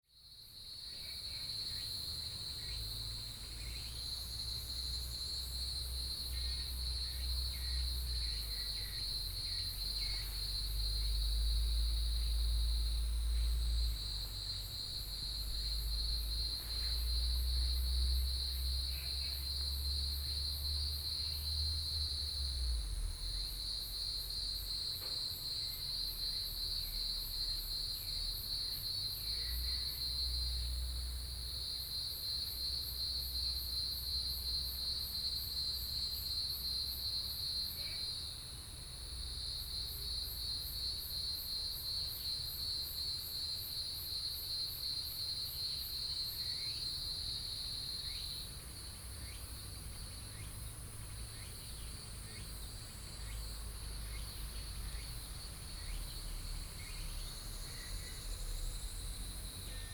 埔里鎮桃米里, Nantou County - In Bed and Breakfasts
Bird calls, Cicadas sound, Frog calls